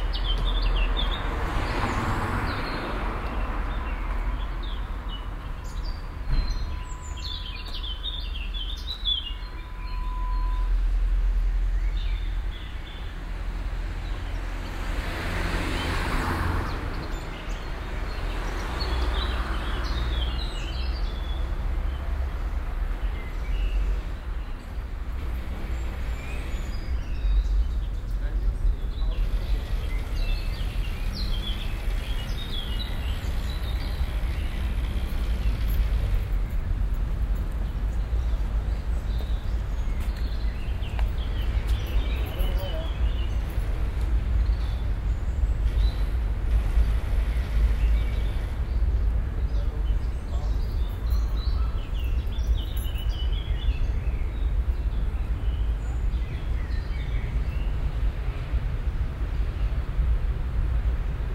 cologne, spichernstrasse, verkehr, mittags
stereofeldaufnahmen im mai 08 - mittags
project: klang raum garten/ sound in public spaces - outdoor nearfield recordings
8 May 2008, 22:02